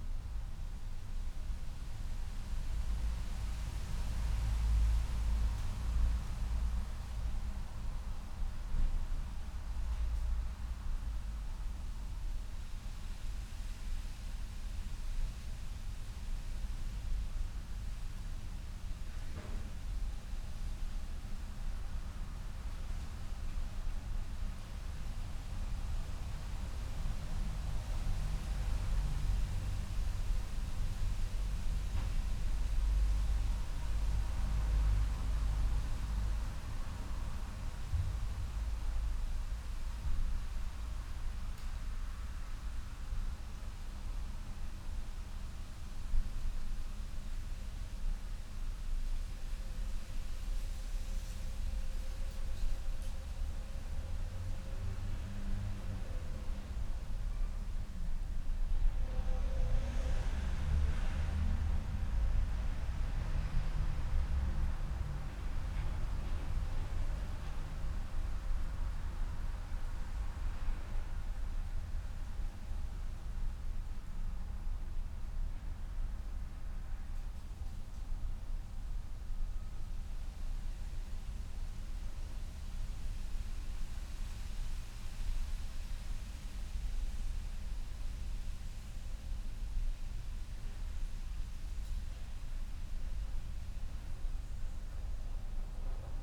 st pauls parish church of north sunderland and seahouses ... inside the porch ... dpa 4060s clipped to bag to zoom h5 ...